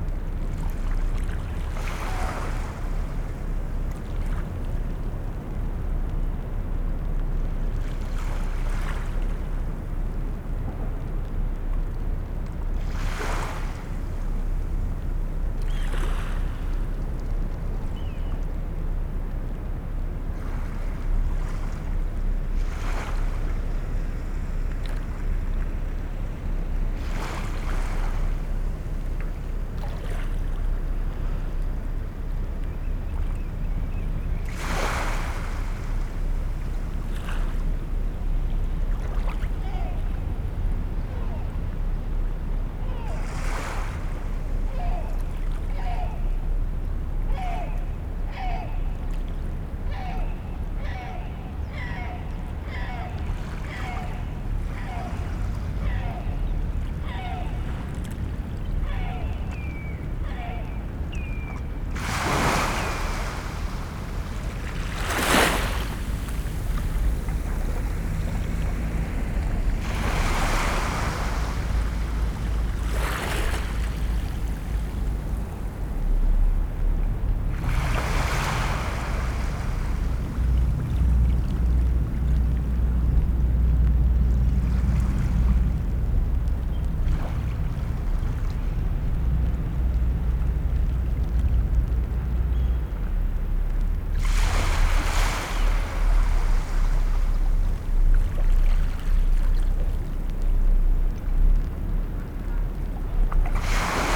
wavelets on a landing ramp ... lavalier mics clipped to baseball cap ... background noise ... traffic ... boats ... rain ... bird call from oystercatcher ... lesser black-backed gull ... herring gull ... golden plover ... redshank ...